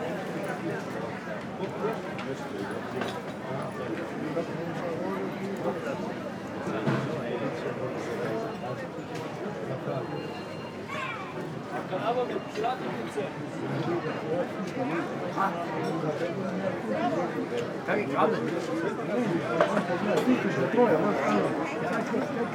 Maribor, Vodnikov Trg, market - saturday market
two gentleman discussing television cables and what one of them ate at the party last night - schnitzel, potato and salad ...
24 May 2014, ~11:00